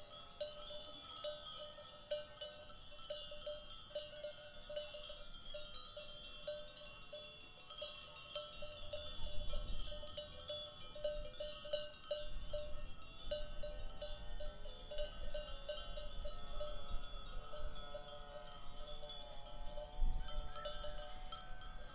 Monfurado, Évora, Portugal - Sheep
Sheep grazing in a beautiful July afternoon in Monfurado
Alentejo, Portugal